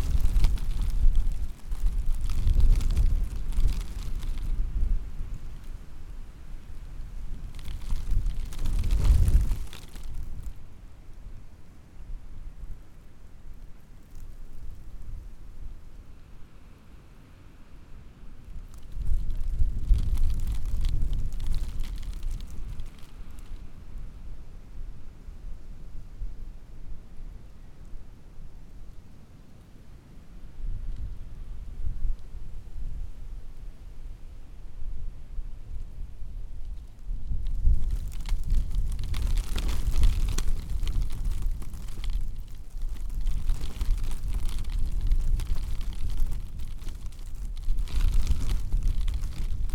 Interkultureller Garten Golgi-Park, Dresden, Deutschland - (431) Security tape rustling on a wind
Recording of a security tape rustling on a wind.
recorded with Sony D100
sound posted by Katarzyna Trzeciak